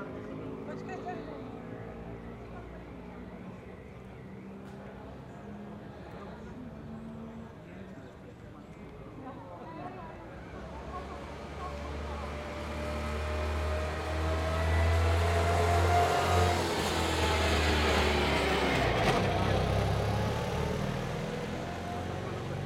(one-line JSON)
{"title": "Klecany, Česká republika - Klecany Veteran Rallye", "date": "2007-06-08 08:59:00", "description": "Tens of shiny old cars with two-stroke engine trying to climb a hill. Shortened recording of several of them.\njiri lindovsky", "latitude": "50.18", "longitude": "14.41", "altitude": "256", "timezone": "Europe/Prague"}